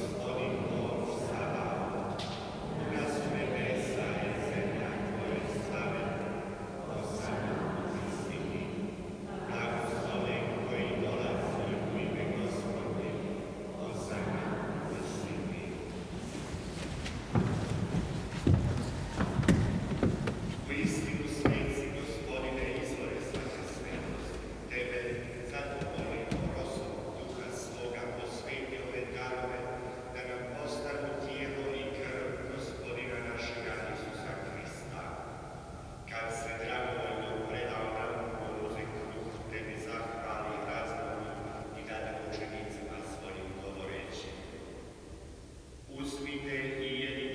St. Sebastian, Ackerstraße, Berlin, Deutschland - St. Sebastian church, Ackerstraße, Berlin - Croatian mass.
St. Sebastian church, Ackerstraße, Berlin - Croatian mass. Priest and believers.
[I used an MD recorder with binaural microphones Soundman OKM II AVPOP A3]
Berlin, Deutschland, European Union, 10 January, 17:20